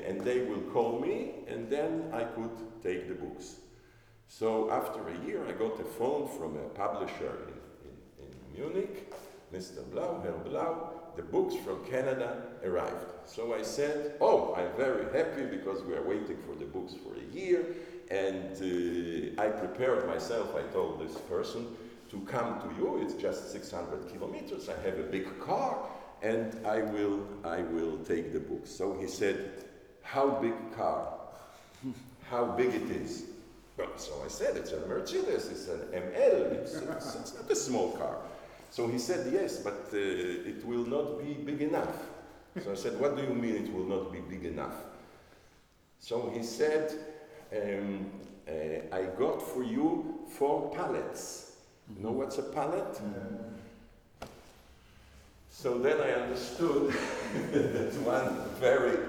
Synagoge, Dzierżoniów, Polen - story of John Koch
Rafael Blau tells the story of John Koch, an important figure in the revival of the synagogue
(Sony PCM D50)